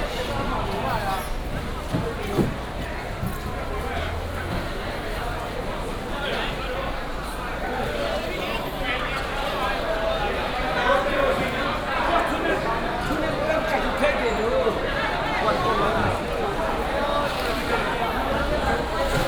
台北魚類批發市場, Taipei City - Fish wholesale market
Walking in the Fish wholesale market, Traffic sound
Wanhua District, 萬大路533號, 6 May 2017